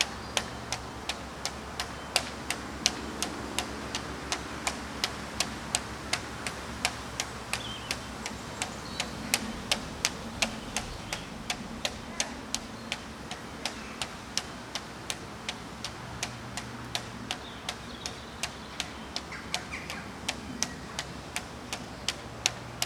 Hambleden, Henley-on-Thames, UK - The Peace and Tranquility of Hambledon Graveyard
The wind was up, the Copper Beech was fluttering in the breeze and the Jackdaws nesting in the bell tower were chattering to their young. The St George flag of England was fluttering in its self-importance and its lanyard flapped and clacked in rhythmic accompaniment. Sony M10 Rode Videomic Pro X with custom fluffy.
21 May 2017